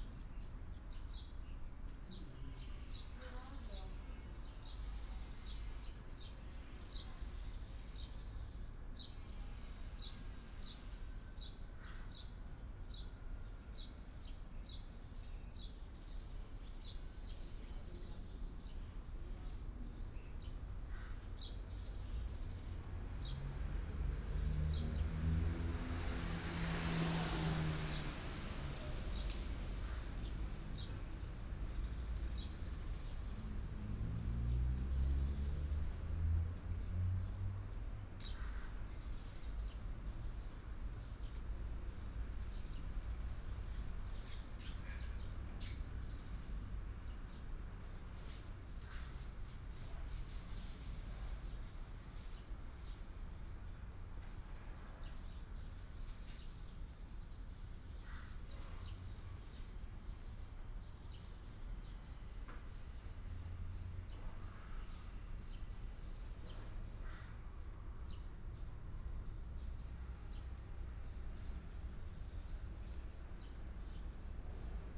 {"title": "古賢里, Hsinchu City - In a small temple", "date": "2017-08-26 10:17:00", "description": "In a small temple, Bird call, Between the plane take off and land", "latitude": "24.83", "longitude": "120.95", "altitude": "8", "timezone": "Asia/Taipei"}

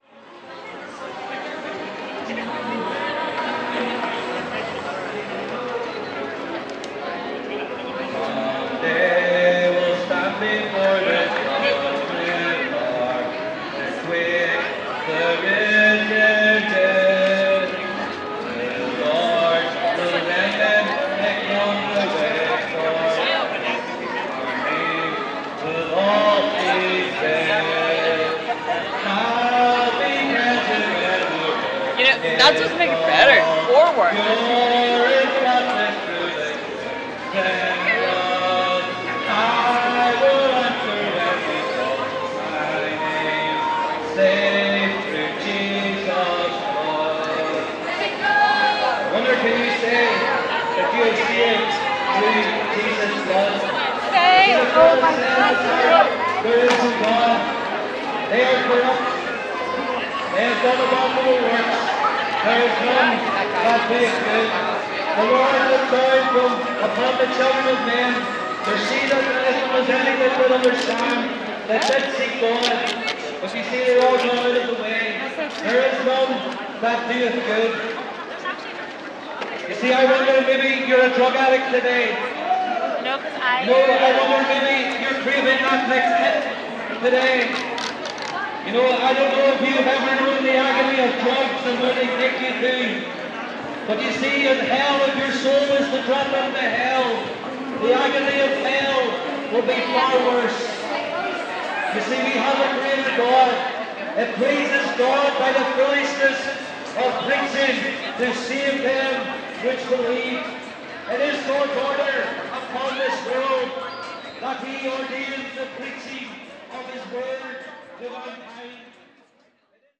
{"title": "Part Third Floor, Belfast, UK - Arthur Square-Exit Strategies Summer 2021", "date": "2021-08-28 15:40:00", "description": "Recording of shoppers, pedestrians, families, and two groups fighting for their voice to be heard, one being the LBGTQ+ singing and the other a Gospel preaching. This recording shows the constant sonic clash within the space when it is fully reopened, and people are trying to spread their message from whichever group they identify. Other times it is other groups of activists or musicians trying to be heard.", "latitude": "54.60", "longitude": "-5.93", "altitude": "9", "timezone": "Europe/London"}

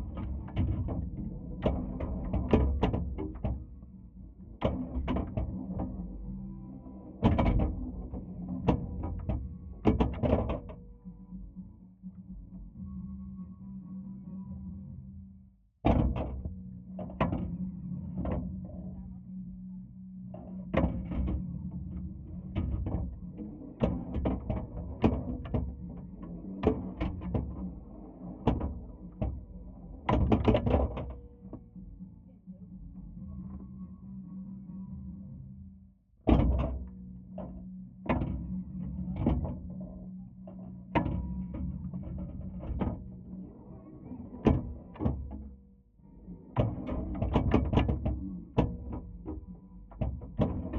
{"title": "Larkspur, CO, USA - Trini playing Pinball", "date": "2016-12-29 14:08:00", "description": "Recorded with a pair of JrF contact mics into a Marantz PMD661", "latitude": "39.25", "longitude": "-104.91", "altitude": "2084", "timezone": "America/Denver"}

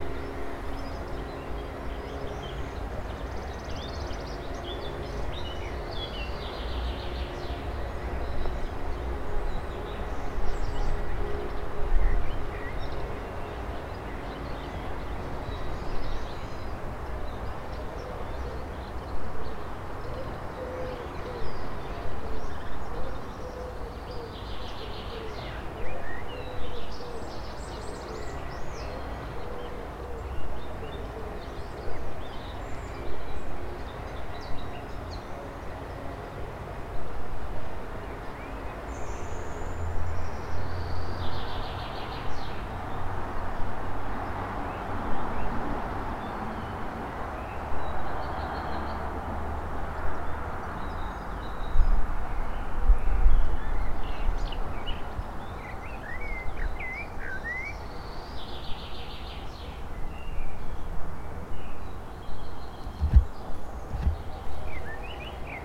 {"title": "Głowackiego, Gorzów Wielkopolski, Polska - Old cementary.", "date": "2020-04-23 14:33:00", "description": "Looking for ghosts on the old cementary.", "latitude": "52.74", "longitude": "15.25", "altitude": "52", "timezone": "Europe/Warsaw"}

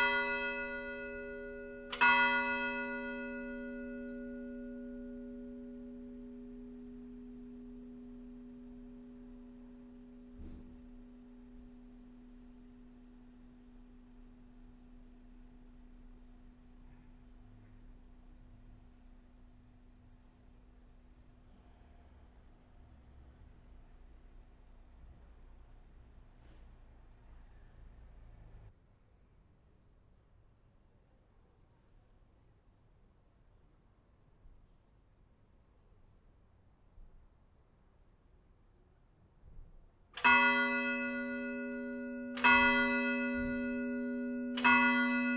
{"title": "Plaça Comtes d'Empúries, Bellcaire d'Empordà, Girona, Espagne - Chateau de Belcaire D'Emporda (Espagne)", "date": "2022-07-09 23:00:00", "description": "Chateau de Belcaire D'Emporda (Espagne)\n2 cloches.\nLa première :\n1 coup : 1/4 d'heure\n2 coups : 1/2 heure\n3 coups : 3/4 d'heure\n4 coups : heure\n2nd cloche : l'heure", "latitude": "42.08", "longitude": "3.09", "altitude": "30", "timezone": "Europe/Madrid"}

